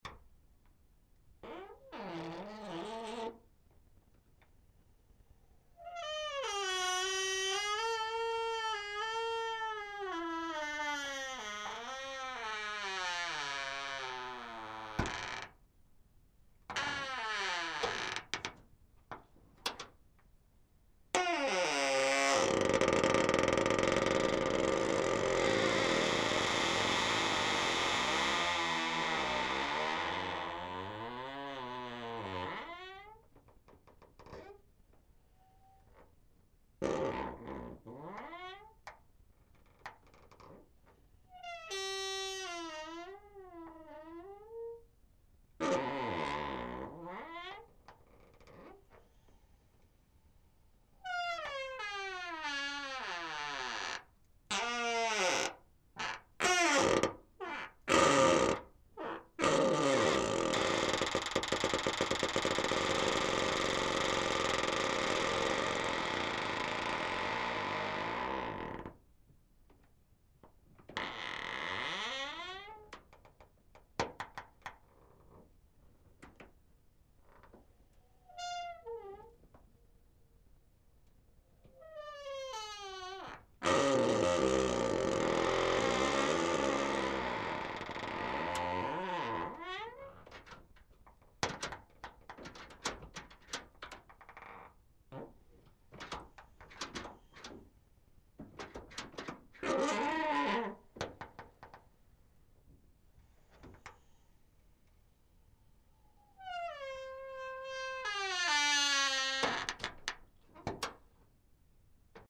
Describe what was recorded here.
Second of my three favourite doors. Creaky hinges of a large metal door recorded with two AKG C 411 contact microphones placed on different parts of the door near the hinges Creaky hinges of a large metal door recorded with two AKG C 411 contact microphones placed on different parts of the door near the hinges and a Sound Devices 702 Field recorder